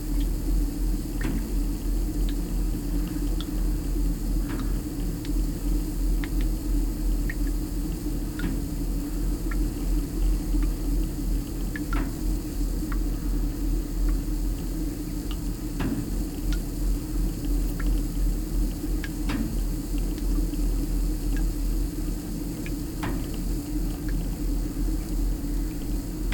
Woodbridge, UK - leaky connection with spray & drip